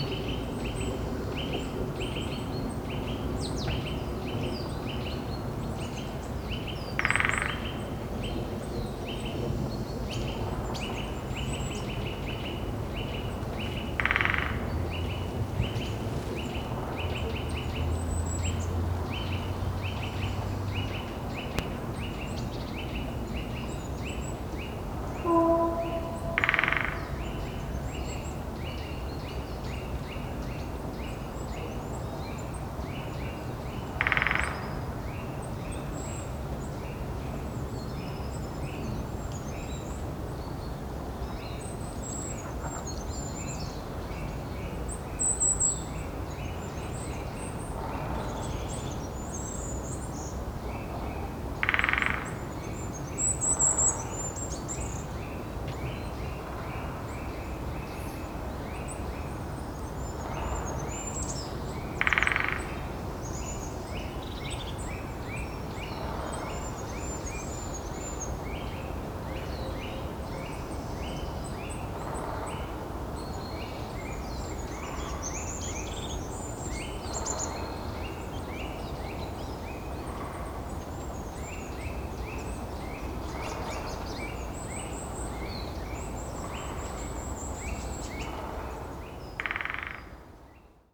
morning winter ambience in the sucholewski forest. lots of bird activity. one particular bird making its rattling call in more or less regular intervals. gentle hight pass filter applied to remove overwhelming boomy noise of the heavy traffic around the forest (sony d50)
Poznań, Poland